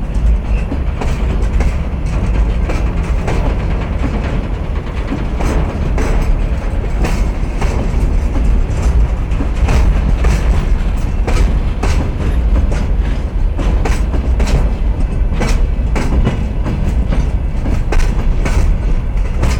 Long train is crossing the bridge near Paldiski street in the middle of the night. (jaak sova)